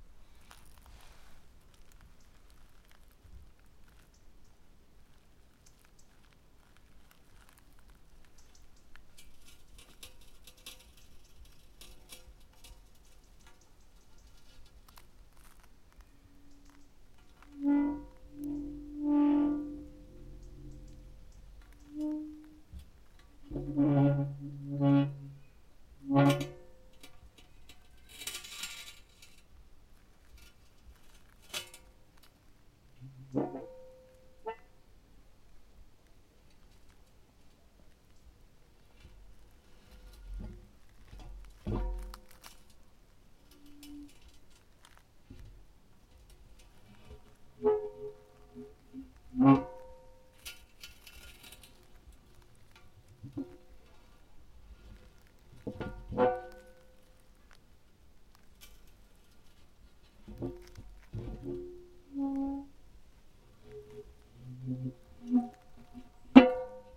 Sasino, summerhouse at Malinowa Road, backyard - foot and cast-iron lid
a short composition for a foot scraping concrete dust and a cast-iron lid of a water well.